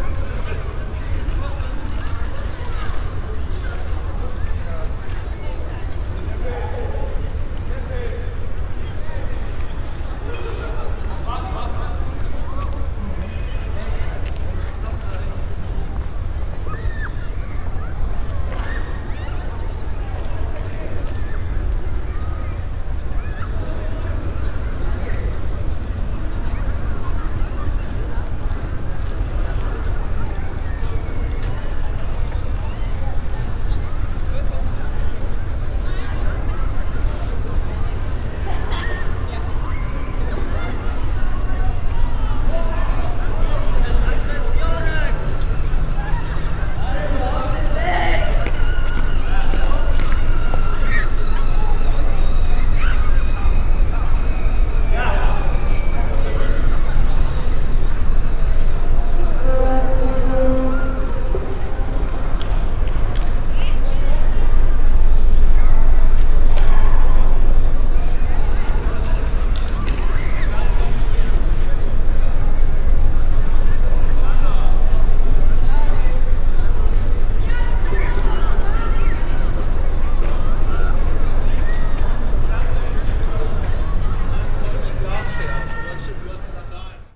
Düsseldorf, Bberkassel, under rhine bridge - düsseldorf, oberkassel, under rhine bridge
A group of young people under the bridge, steps and kicking sounds some bottles
soundmap nrw: social ambiences/ listen to the people in & outdoor topographic field recordings